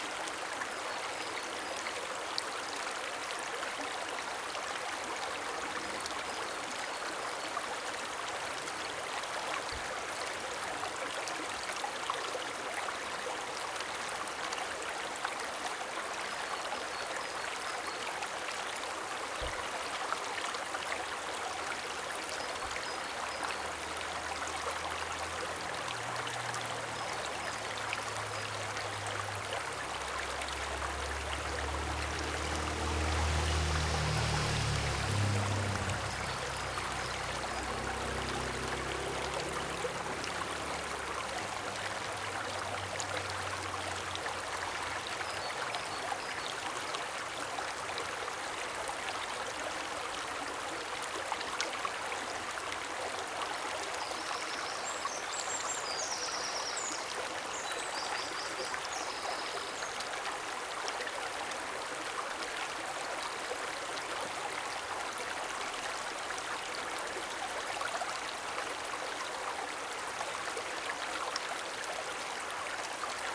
wermelskirchen, kellerstraße: eschbach - the city, the country & me: eschbach creek
the city, the country & me: may 7, 2011
Wermelskirchen, Germany, 7 May 2011, ~13:00